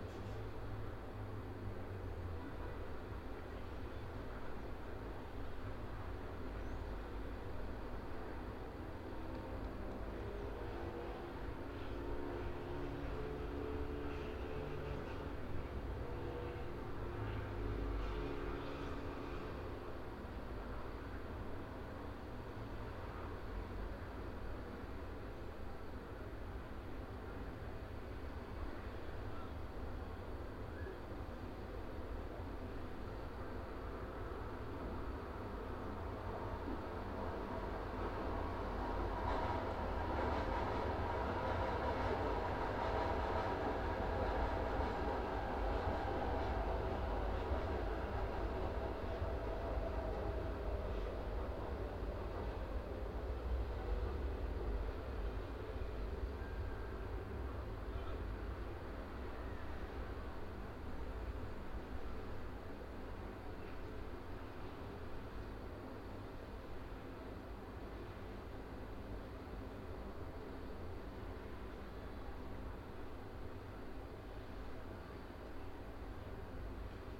{"title": "R. Ipanema - Mooca, São Paulo - SP, Brasil - INTERNA CASA - CAPTAÇÃO APS UAM 2019", "date": "2019-05-01 15:00:00", "description": "Captação de áudio interna para cena. Trabalho APS - Disciplina Captação e edição de áudio 2019/1", "latitude": "-23.55", "longitude": "-46.61", "altitude": "740", "timezone": "America/Sao_Paulo"}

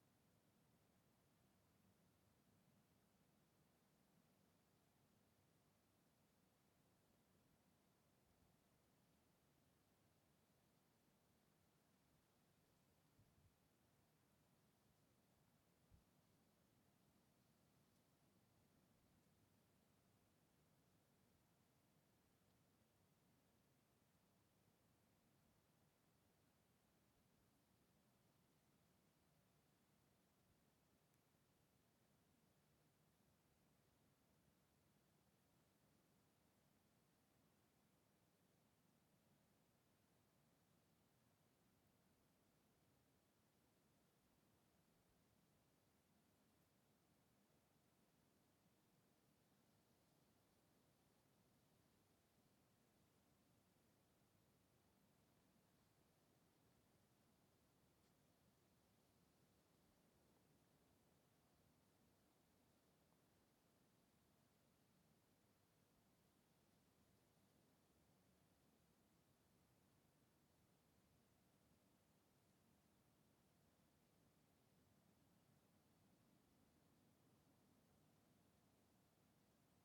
{"title": "Lisburn, Reino Unido - Derriaghy Dawn", "date": "2014-06-22 03:25:00", "description": "Field Recordings taken during the sunrising of June the 22nd on a rural area around Derriaghy, Northern Ireland\nZoom H2n on XY", "latitude": "54.55", "longitude": "-6.04", "altitude": "80", "timezone": "Europe/London"}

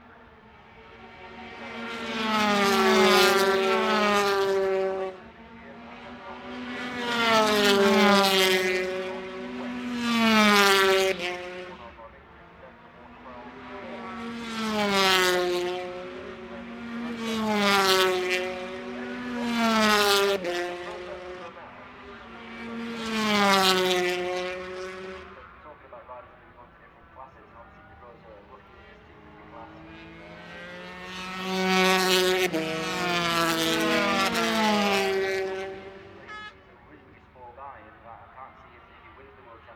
{"title": "Unnamed Road, Derby, UK - British Motorcycle Grand Prix 2004 ... 250 Qualifying ...", "date": "2004-07-23 15:00:00", "description": "British Motorcycle Grand Prix 2004 ... 250 Qualifying ... one point stereo mic to minidisk ... date correct ... time optional ...", "latitude": "52.83", "longitude": "-1.37", "altitude": "74", "timezone": "Europe/London"}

